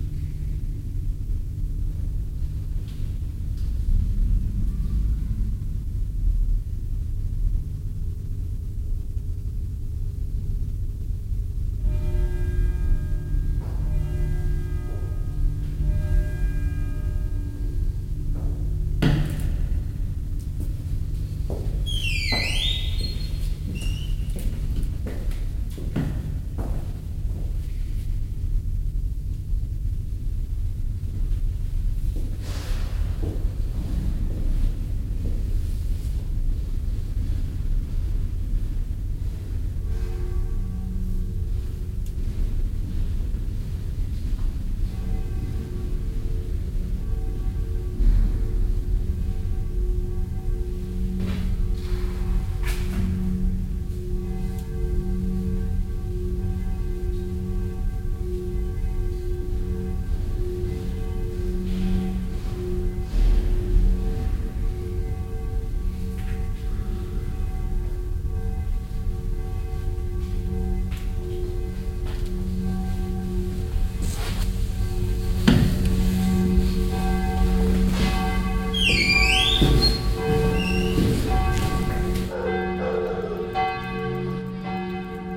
hilden, mittelstrasse, st. johannes kirche
läuten zum abendgebet, zunächst aufgenommen in der kirche dann gang zum ausagng und aussenaufnahme
soundmap nrw:
topographic field recordings, social ambiences